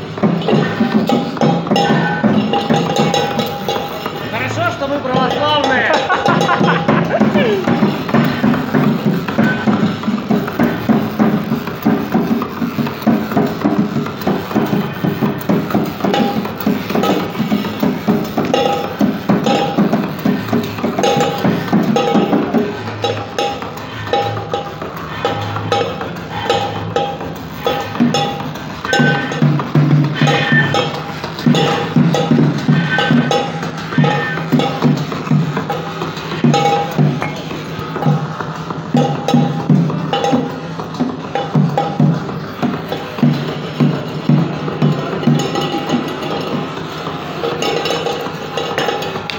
Morskoy Avenue, Lisiy Nos Village, Saint-Petersburg, Russia - Orthodox Xmas noise perfomance
Abandoned military building
Xmas party
Санкт-Петербург, Северо-Западный федеральный округ, Россия, 6 January